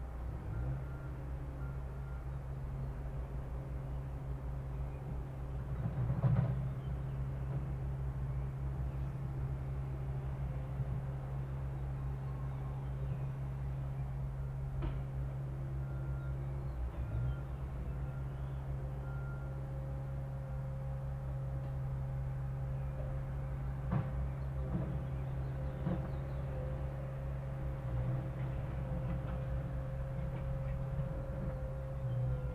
Glorieta, NM, so called USA - GLORIETA morning